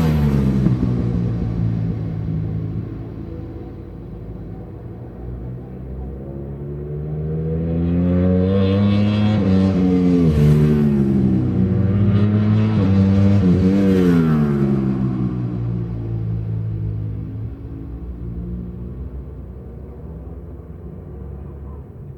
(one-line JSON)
{"title": "West Kingsdown, UK - World Super Bikes 2000 ... superbikes ...", "date": "2000-10-15 12:00:00", "description": "World Super Bikes race one ... Dingle Dell ... Brands Hatch ... one point stereo to mini-disk ... most of race ...", "latitude": "51.35", "longitude": "0.26", "altitude": "152", "timezone": "Europe/London"}